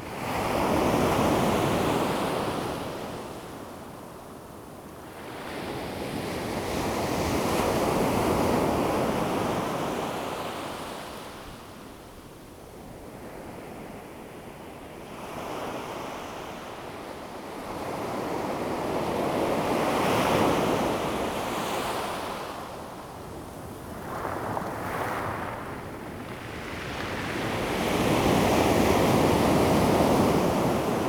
Taitung City, Taiwan - At the seaside
At the seaside, Sound of the waves, Very hot weather
Zoom H2n MS + XY
Taitung County, Taiwan, 4 September 2014, ~16:00